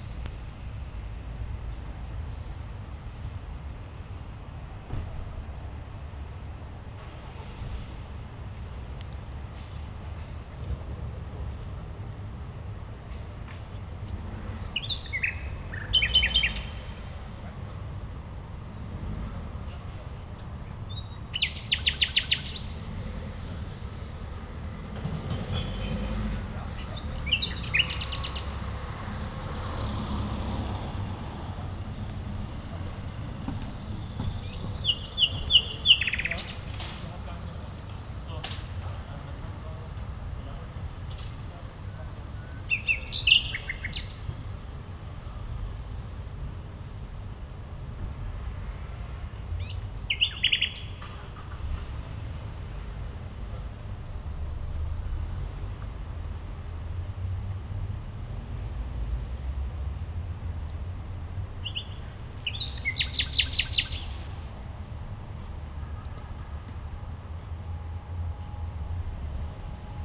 Mitte, Berlin, Germany - nachtigall in der gartenstraße
eine nachtigall am spielplatz gartenstraße, a nightingale at playground, gartenstraße
25 April 2015